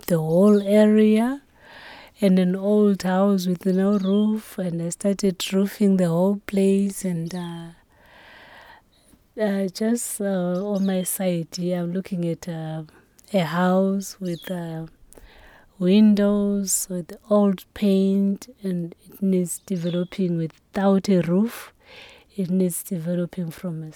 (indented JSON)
{
  "title": "Lupane, Zimbabwe - “I like to develop something from nothing…”",
  "date": "2013-10-26 12:30:00",
  "description": "We are sitting with Thembi in the shade of her new home, while a merciless midday-sun is burning over the quiet Lupane bush-land… our brief recording is a follow up on a longer interview, we recorded almost exactly a year ago, 29 Oct 2012, when Thembi was still based at Amakhosi Cultural Centre. “I like to develop something from nothing…” she tells us looking at her present homestead and the work she imagines to do here. She wants to continue sharing her skills and knowledge of the African dances with the women and children in this rural district of Zimbabwe, beginning with the pupils in local Primary Schools. Her aim is to set up a cultural centre here in the bush of Lupane…",
  "latitude": "-18.90",
  "longitude": "27.73",
  "timezone": "Africa/Harare"
}